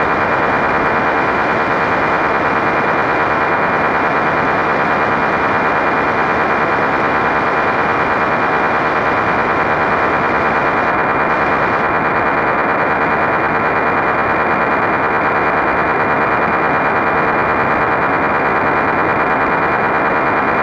La Galaube-Tarn 81-France / Conspiracy And Crash/Lab01/Install+capt. sonores/Isio4 <++
CONSPIRACY AND CRASH0809022008
>CAPTATIONS SONORES DES FREQ.RADIO AM/ FLUX ALEATOIRE
>ANTENNE RELAI BASE MILITAIRE/
SOUS MARIN/FRANCE
INSTALLATION>